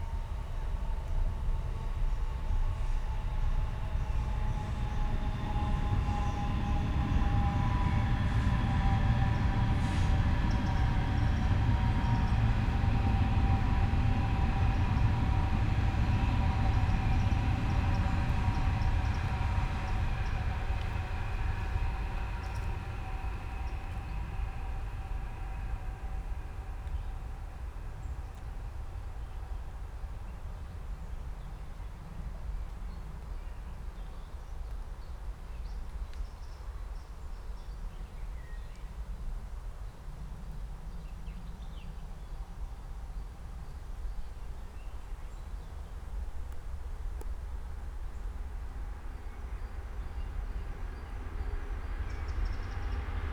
Friedhof Baumschulenweg, Berlin, Deutschland - cemetery ambience, trains
at the edge of cemetery Friedhof Baumschulenweg, Berlin, rather cold spring morning, trains passing by, city sounds in a distance, park ambience
(Sony PCM D50 DPA4060)
Berlin, Germany, 28 April 2019